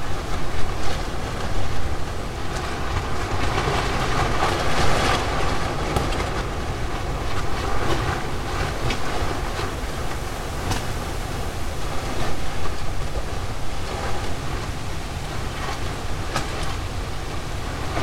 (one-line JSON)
{"title": "Cologne, Neusser Wall, Deutschland - Storm", "date": "2013-10-28 07:28:00", "description": "A heavy autumn storm named \"Christian\" shaking the trees in the backyard and tearing off the tarpaulins of a scaffolding.", "latitude": "50.96", "longitude": "6.96", "altitude": "55", "timezone": "Europe/Berlin"}